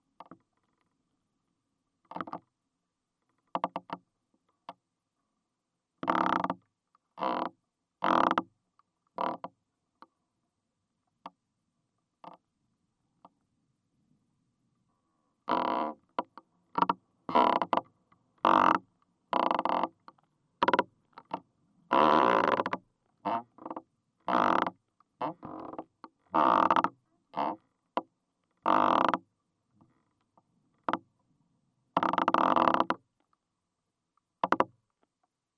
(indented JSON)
{
  "title": "Sauclières, France - Two firs",
  "date": "2016-05-01 18:00:00",
  "description": "Two firs are suffering with the wind. Crackling is recorded inside the tree, in a hole.",
  "latitude": "43.97",
  "longitude": "3.37",
  "altitude": "792",
  "timezone": "Europe/Paris"
}